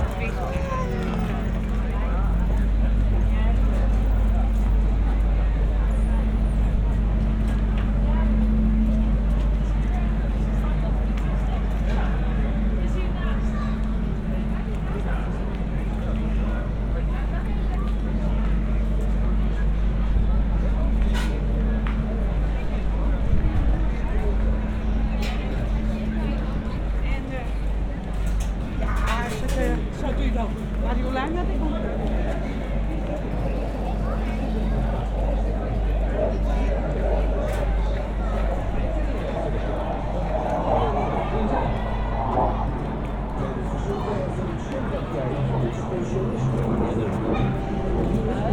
franeker: voorstraat - the city, the country & me: fair soundwalk
fair during the frisian handball tournament pc (franeker balverkaatsdag)
the city, the country & me: august 1, 2012